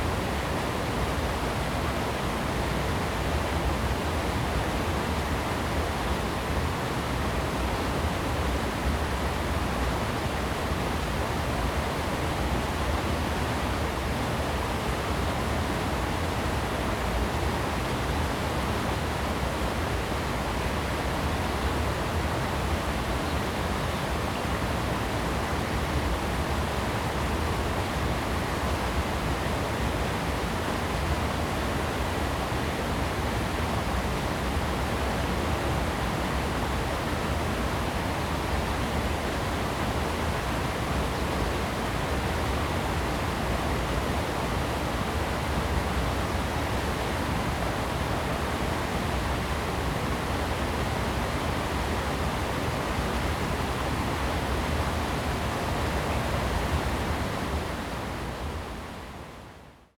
桃米溪, 桃米里 Nantou County - Weir
Weir, Flow sound
Zoom H2n MS+XY